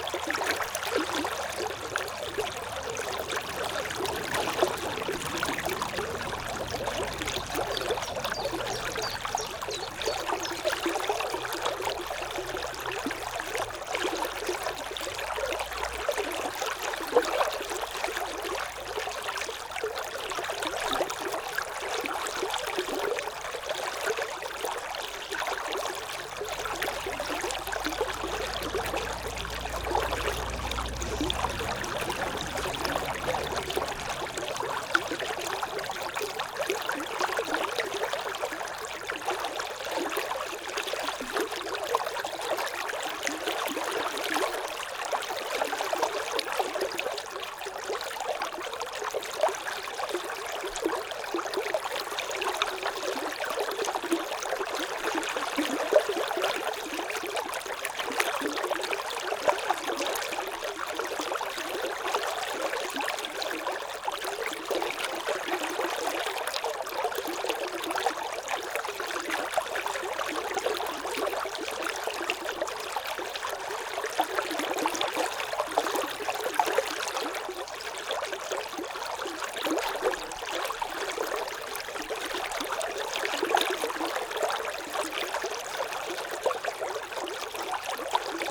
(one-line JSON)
{"title": "Mont-Saint-Guibert, Belgique - The river Orne", "date": "2016-04-10 15:50:00", "description": "Recording of the river Orne, in a pastoral scenery.\nAudioatalia binaural microphone used grouped and focused on the water.", "latitude": "50.63", "longitude": "4.63", "altitude": "99", "timezone": "Europe/Brussels"}